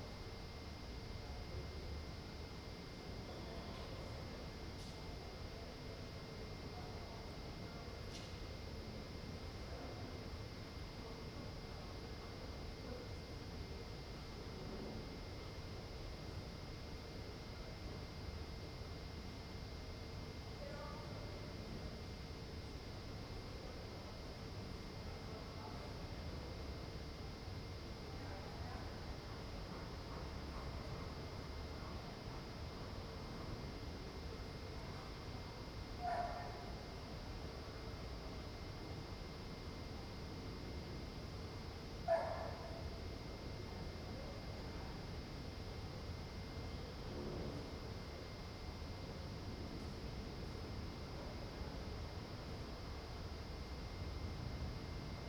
Ascolto il tuo cuore, città, I listen to your heart, city. Several chapters **SCROLL DOWN FOR ALL RECORDINGS** - Evening, first day of students college re-opening in the time of COVID19 Soundscape
"Evening, first day of students college re-opening in the time of COVID19" Soundscape
Chapter CXXII of Ascolto il tuo cuore, città. I listen to your heart, city
Tuesday, September 1st, 2020, five months and twenty-one days after the first soundwalk (March 10th) during the night of closure by the law of all the public places due to the epidemic of COVID19.
Start at 10:36 p.m. end at 11:29 p.m. duration of recording 52’51”
The student's college (Collegio Universitario Renato Einaudi) opens on this day after summer vacation.